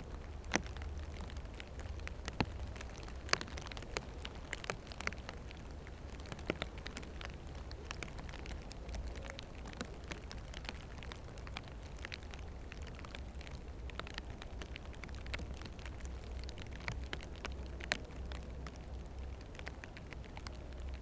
Lithuania, Utena, rain under the leaves
microphones placed under the fallen leaves of maple tree...and rain begins
4 November 2012